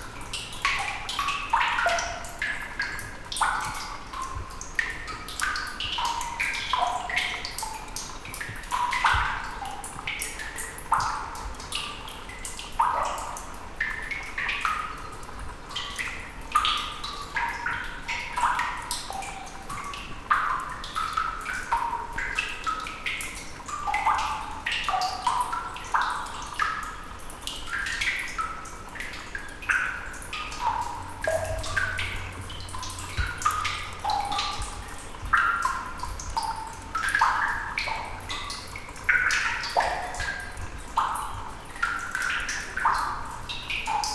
Madeira, Levada do Norte - cave
water dripping in a small mountain cave